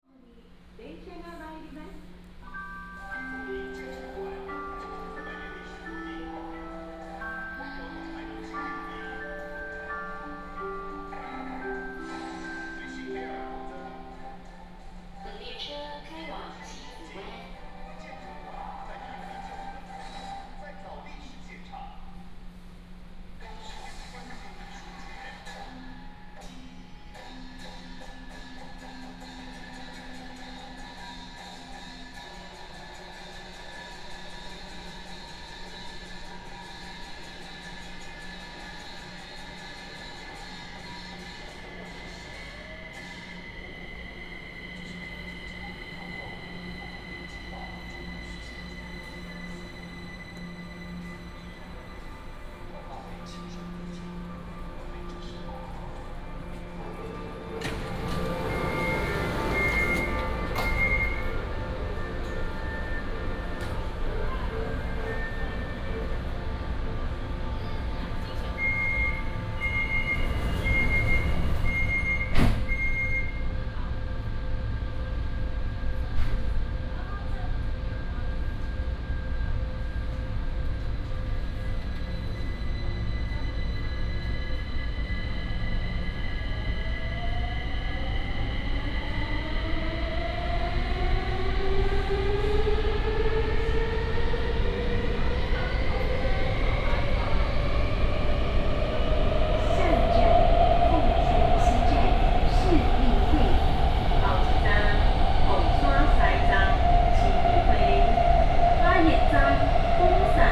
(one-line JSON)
{"title": "Orange Line (KMRT), 高雄市 - Take the MRT", "date": "2018-03-30 10:56:00", "description": "Take the MRT, In-car message broadcasting", "latitude": "22.62", "longitude": "120.34", "altitude": "10", "timezone": "Asia/Taipei"}